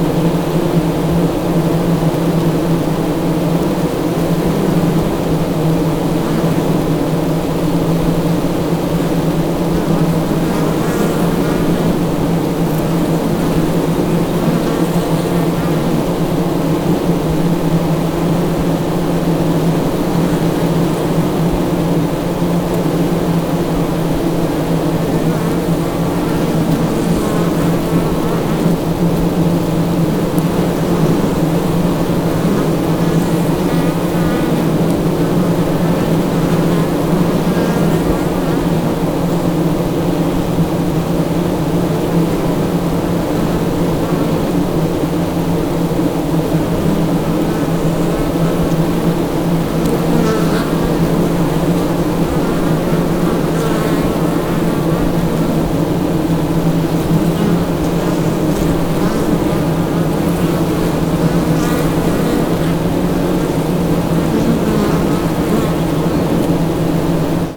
{"title": "bee house, Portugal - bee house", "date": "2012-07-18 15:00:00", "description": "shotgun at the entrance of the bee house, world listening day, recorded together with Ginte Zulyte.", "latitude": "40.85", "longitude": "-8.16", "altitude": "799", "timezone": "Europe/Lisbon"}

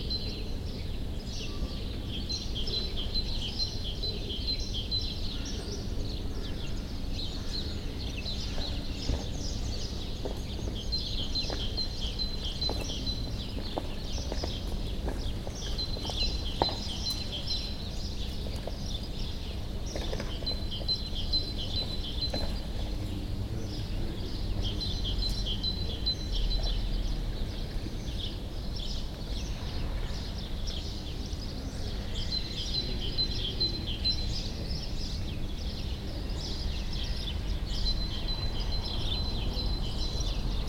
{"title": "Hribarjevo nabrežje, Ljubljana, Slovenia - At the Ljubljanica river embankment", "date": "2020-03-28 08:21:00", "description": "A few minutes spend along Ljubljanica river embankment listening to the almost silent Saturday morning under #Stayathome #OstaniDoma quarantine situation. It has been a very long time since one could enjoy this kind of soundscape in the city center.", "latitude": "46.05", "longitude": "14.51", "altitude": "296", "timezone": "Europe/Ljubljana"}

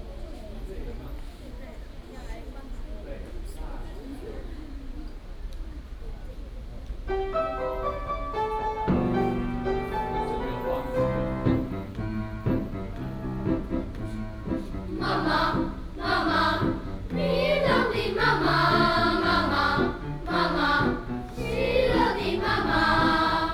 {"title": "埔里國小, Puli Township - Vocal exercises", "date": "2016-05-19 08:18:00", "description": "Students Choir, Vocal exercises", "latitude": "23.97", "longitude": "120.97", "altitude": "450", "timezone": "Asia/Taipei"}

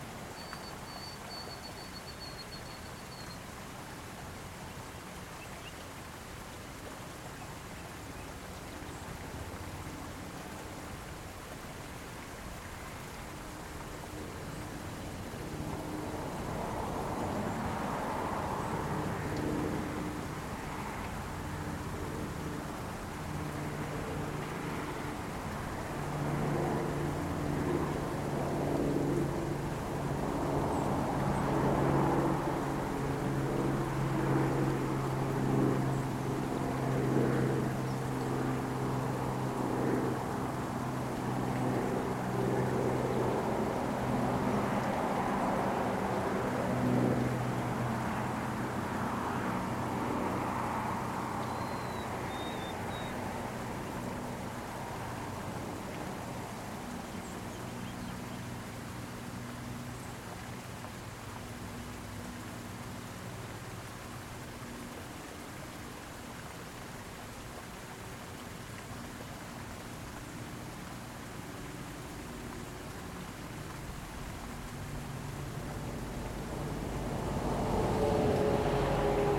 {"title": "Headwaters of the River Des Peres, St. Louis, Missouri, USA - River Des Peres Headwaters", "date": "2022-04-16 09:46:00", "description": "Headwaters of the River Des Peres", "latitude": "38.68", "longitude": "-90.39", "altitude": "202", "timezone": "America/Chicago"}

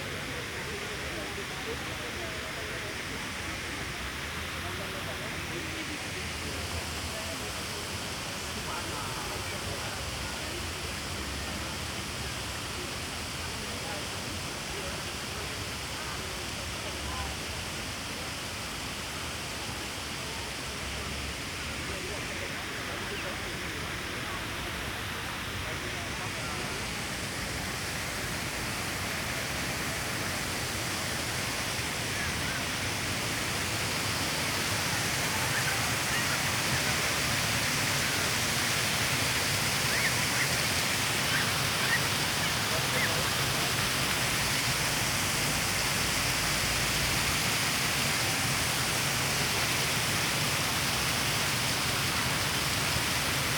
{"title": "Ebertplatz Köln, Deutschland - fountain, square ambience", "date": "2018-08-22 19:08:00", "description": "after a long time, this fountain has been activated again, probably for a series of event in order to revive this place and attract people to stay. Ebertplatz was abandoned for a while, and seemingly has become a rather problematic neighbourhood.\n(Sony PCM D50, Primo EM172)", "latitude": "50.95", "longitude": "6.96", "altitude": "49", "timezone": "GMT+1"}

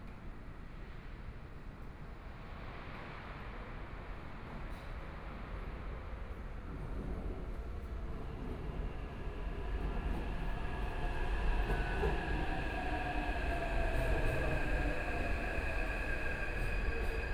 {"title": "Qiyan Station, Taipei - On the platform", "date": "2014-02-10 17:06:00", "description": "On the platform, Trains arrive at the station, Train leaving the station, Firecrackers, Fireworks sound, Clammy cloudy, Binaural recordings, Zoom H4n+ Soundman OKM II", "latitude": "25.13", "longitude": "121.50", "timezone": "Asia/Taipei"}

